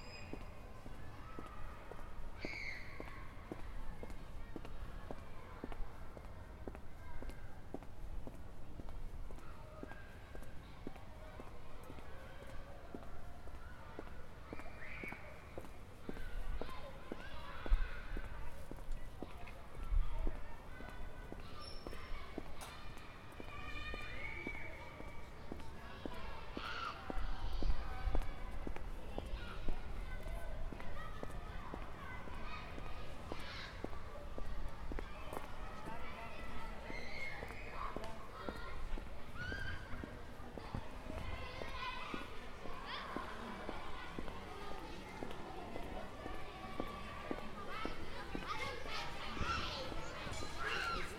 Rondje Tellegenbuurt, Amsterdam, Nederland - Hard versus Zacht / Hard versus Soft
(description in English below)
Dit gebied zit vol tegenstrijdigheden. Het ene moment sta je op een plein vol spelende kinderen, het andere moment bevindt je je in een oase van rust. Het hofje laat geluid van buiten nauwelijks toe.
This area is full of contradictions. One moment you'll find yourself on a square loaded with playing and screaming children, the other moment you're in an oasis of silence. The courtyard hardly allows any sounds from outside.